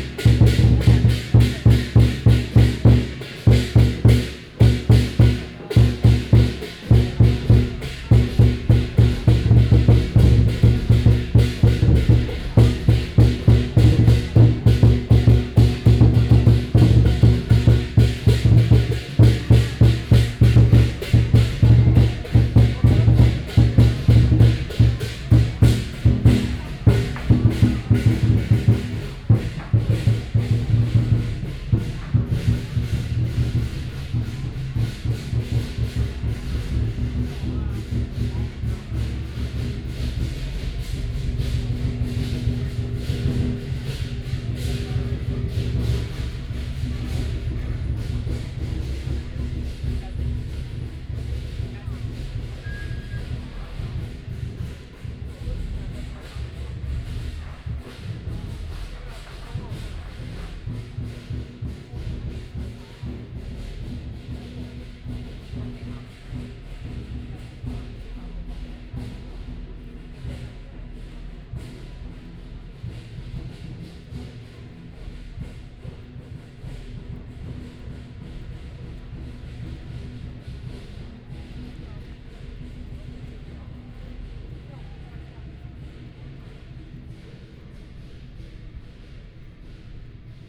No., Alley, Lane, Section, Zhongyang N. Rd., Beitou Dist., Taipei - Traditional temple ceremony
In front of the temple, Traditional temple activities, Percussion and performing rituals, Crowd cheers, Binaural recordings, Sony PCM D50 + Soundman OKM II
Taipei City, Taiwan, October 2013